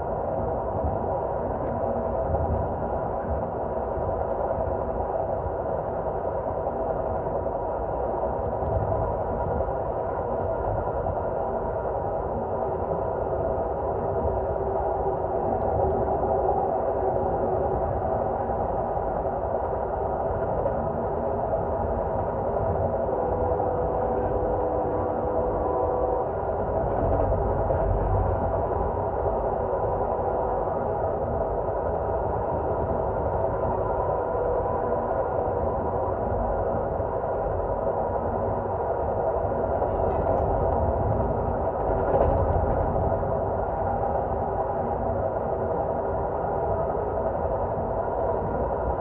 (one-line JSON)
{"title": "In-Route, Texas Eagle, TX, USA - Texas Eagle Amtrak, Contact Mics on Window", "date": "2015-12-25 11:10:00", "description": "Recorded with a pair of JrF contact mics and a Marantz PMD661.", "latitude": "30.73", "longitude": "-97.44", "altitude": "177", "timezone": "America/Chicago"}